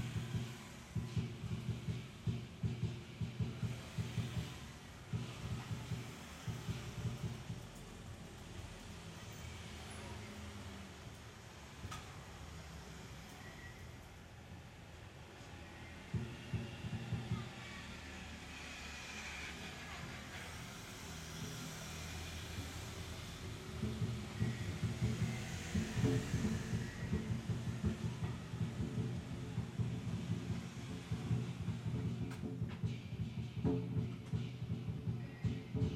{
  "title": "251台灣新北市淡水區中興里 - drums group were practicing for a festival",
  "date": "2012-10-22 21:02:00",
  "description": "The drums group made the sound in campus.I was recording on balcony. There were cars passing by, and the teams of sport were hitting in playground.",
  "latitude": "25.17",
  "longitude": "121.45",
  "altitude": "49",
  "timezone": "Asia/Taipei"
}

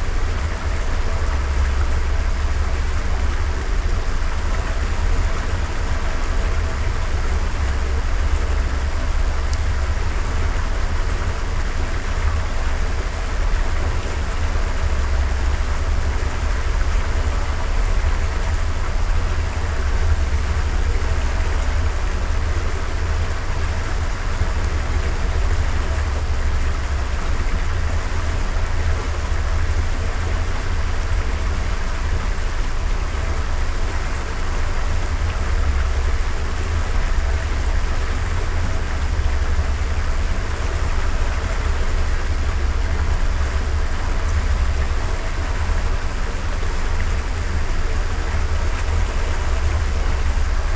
{
  "title": "Utena, Lithuania, mud clearing multichannel",
  "date": "2018-09-20 18:30:00",
  "description": "the study of mud clearing basin. multichannel recording. omni, contact, electromagnetic",
  "latitude": "55.52",
  "longitude": "25.58",
  "altitude": "101",
  "timezone": "Europe/Vilnius"
}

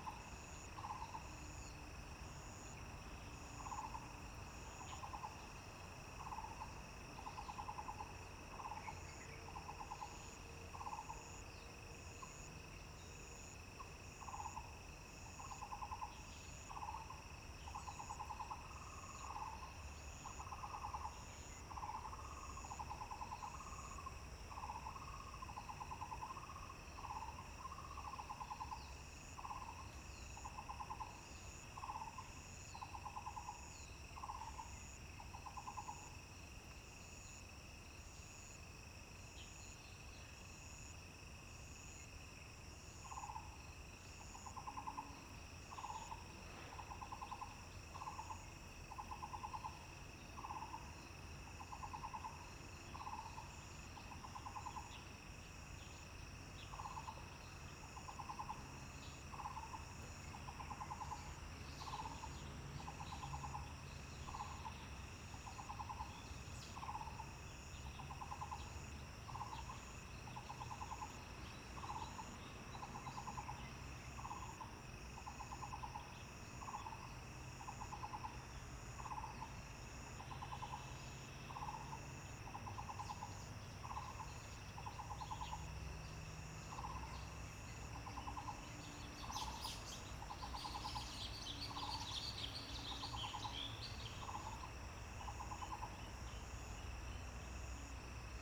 {
  "title": "Taomi Ln., Nantou County - Ecological pool",
  "date": "2015-04-30 08:44:00",
  "description": "Bird calls, Frogs chirping, Sound of insects\nZoom H2n MS+XY",
  "latitude": "23.94",
  "longitude": "120.93",
  "altitude": "472",
  "timezone": "Asia/Taipei"
}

